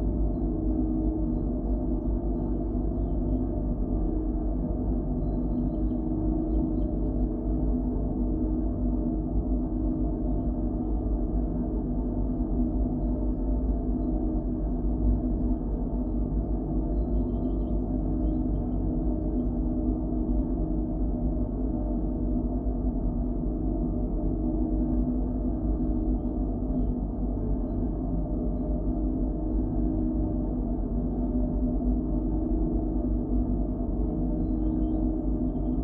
{"title": "Könighsheide, Berlin, Deutschland - well, Brunnen 16", "date": "2022-04-30 11:55:00", "description": "Berlin Königsheide, one in a row of drinking water wells, now suspended\n(Sony PCM D50, DIY contact microphones)", "latitude": "52.45", "longitude": "13.49", "altitude": "36", "timezone": "Europe/Berlin"}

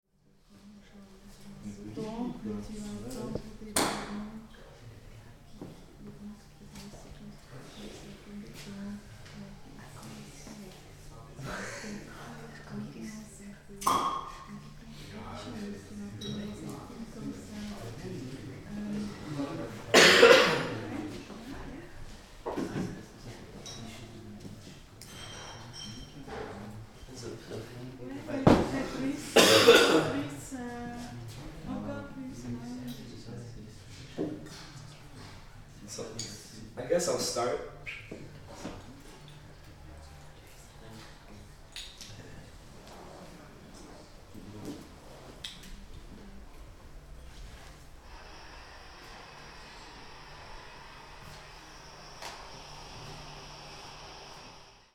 {"title": "berlin, flughafenstr., staalplaat - staalplaat: before concert", "date": "2009-04-30 22:50:00", "description": "30.04.2009 22:50 concert is starting", "latitude": "52.48", "longitude": "13.43", "altitude": "55", "timezone": "Europe/Berlin"}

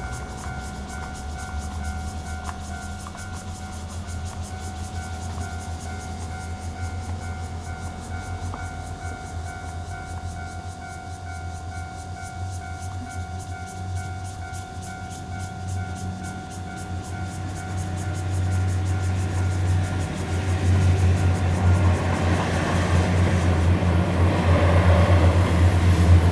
{"title": "Xipu Rd., Guanshan Township - In the railway level crossing", "date": "2014-09-07 11:20:00", "description": "In the railway level crossing, Cicadas sound, Traffic Sound, Train traveling through, Very hot weather\nZoom H2n MS+ XY", "latitude": "23.05", "longitude": "121.17", "altitude": "228", "timezone": "Asia/Taipei"}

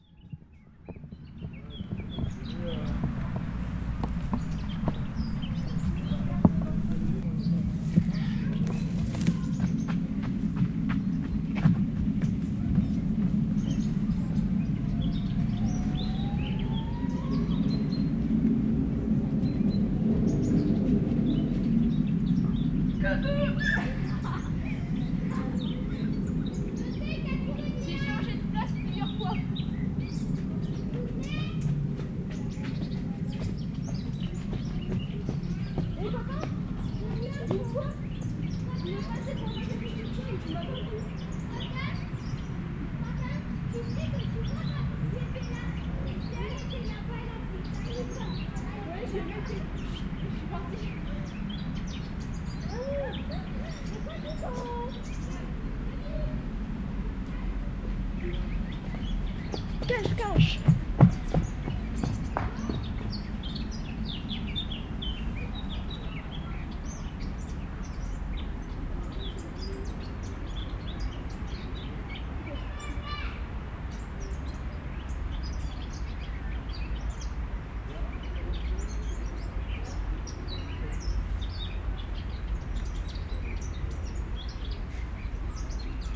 {"title": "Rue des Dauphins, Grenoble, France - Fauvette de septembre", "date": "2022-09-11 10:45:00", "description": "Dans les arbres du Jardin des Plantes, une fauvette chante, les enfants jouent à cache-cache.", "latitude": "45.19", "longitude": "5.74", "altitude": "216", "timezone": "Europe/Paris"}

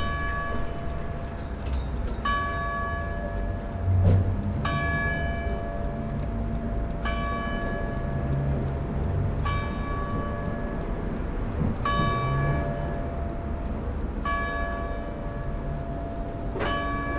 {"title": "remscheid: theodor-heuss-platz - the city, the country & me: flagstaff", "date": "2013-12-20 10:59:00", "description": "rope of a flagstaff rattles in the wind, drone of a motorcycle, bells of the town hall\nthe city, the country & me: november 9, 2013", "latitude": "51.18", "longitude": "7.19", "altitude": "368", "timezone": "Europe/Berlin"}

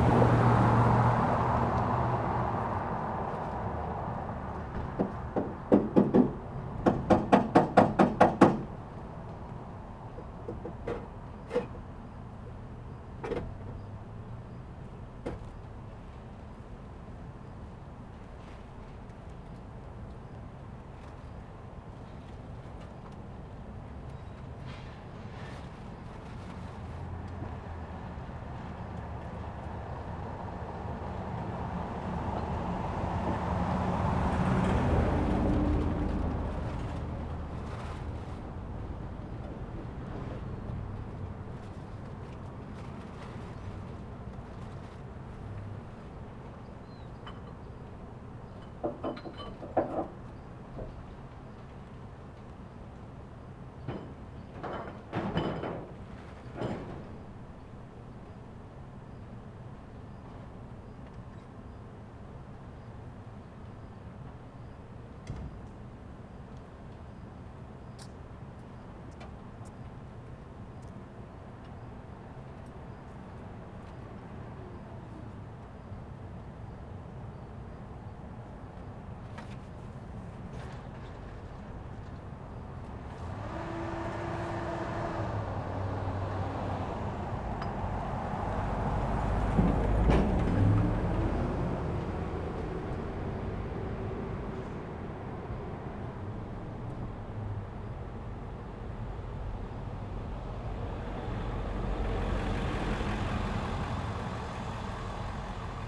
{"date": "2018-06-29 07:49:00", "description": "eka joins us near the end of the recording and we continue our errand running stardom", "latitude": "35.66", "longitude": "-105.99", "altitude": "2046", "timezone": "America/Denver"}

